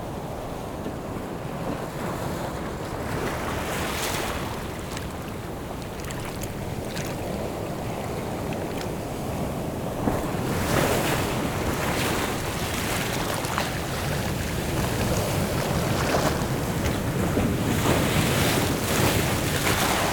三貂角, New Taipei City - Sound of the waves
Sound of the waves
Zoom H6 XY mic+ Rode NT4
New Taipei City, Taiwan, 21 July 2014